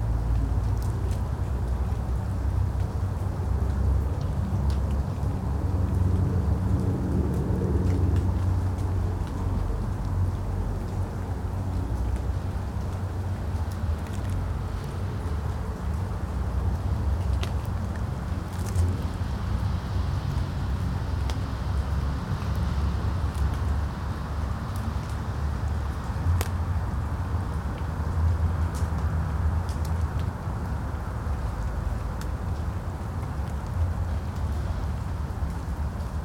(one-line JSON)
{"title": "medvedova ulica, maribor, slovenia - distant music and the remains of rain", "date": "2012-06-13 23:13:00", "description": "walking home along medvedova, music from a distant concert wafting through the air. recorded from the park at the end of the road, with water dripping from the trees from the day's rain.", "latitude": "46.57", "longitude": "15.63", "altitude": "277", "timezone": "Europe/Ljubljana"}